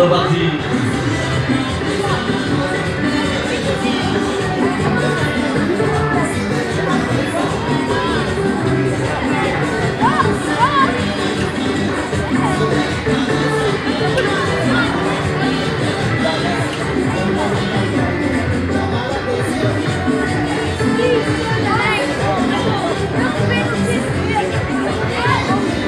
Auf der Sommerkirmes, die temporär auf einem Prakplatz im Zentrum der Stadt aufgebaut wird. Der Klang von zwei Fahrgeschäften und einigen wenigen Jugendlichen Besuchern.
At the summer fun fair, that is temporarily build up on a parking place in the center of the village. The sound of two different funfair attractions and some rare young visitors.
6 August 2012, 19:10